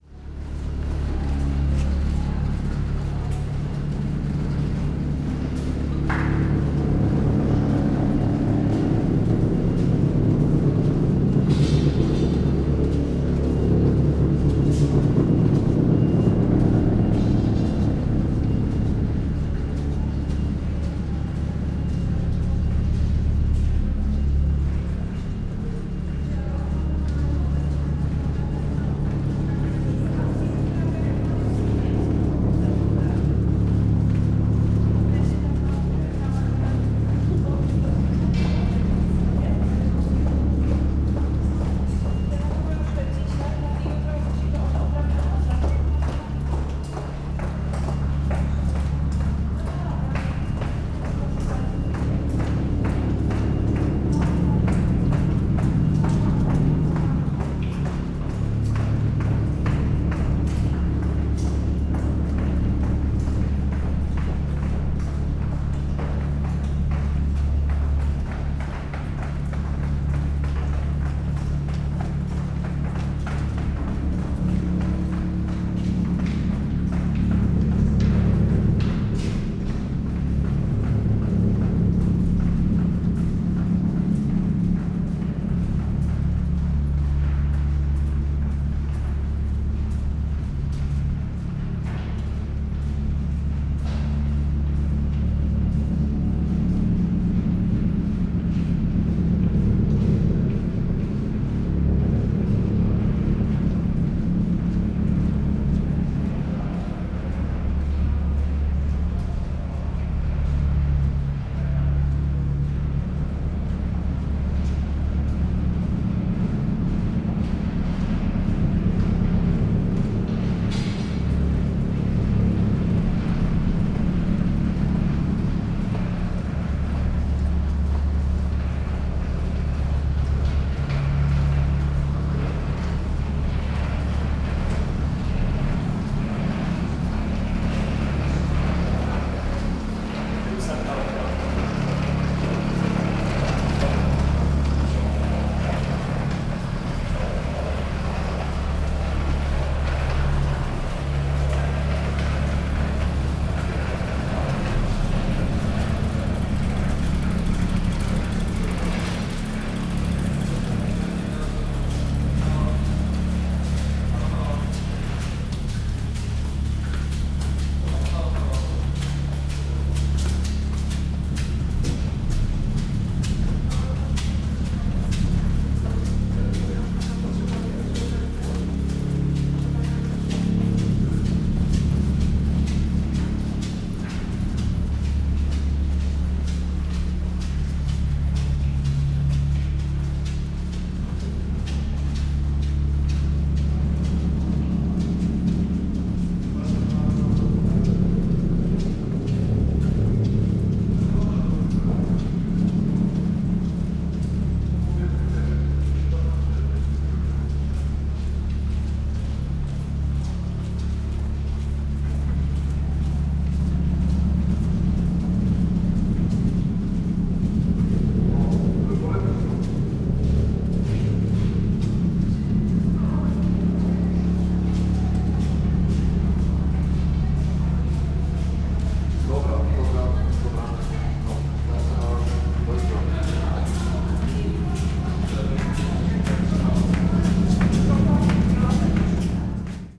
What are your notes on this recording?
pedestrians streaming through the underpass, the roar is an excavator operating above the ceiling of the underpass. there is a major constructions site around the traffic circle due to new tram station coming into existence.